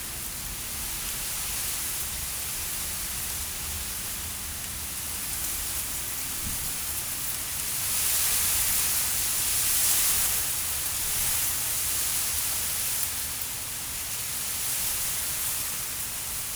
Leeuw-Saint-Pierre, Belgique - Wind in the reeds
The wind in the reeds and a small barge arriving in the sluice (Ruisbroeck sluis).